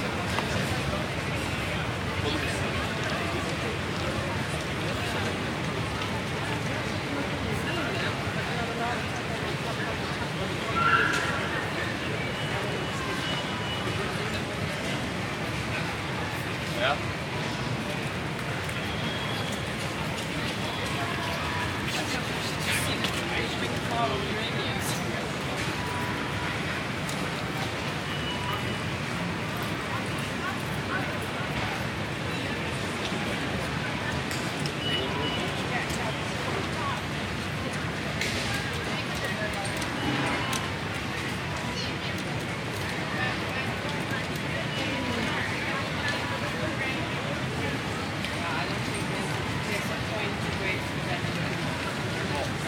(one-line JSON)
{"title": "Vertrekpassage, Schiphol, Nederländerna - Schipol Plaza ambience", "date": "2018-03-26 11:39:00", "description": "Recorded ambience at the Schipol airport while waiting for my airplane to be ready for takeoff. This is from the big hall in the airport where you also can connect to the underground trains.", "latitude": "52.31", "longitude": "4.76", "altitude": "6", "timezone": "Europe/Amsterdam"}